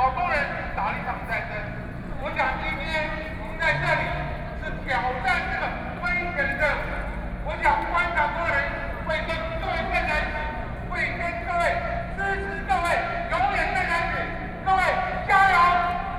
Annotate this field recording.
Student activism, Walking through the site in protest, People and students occupied the Executive Yuan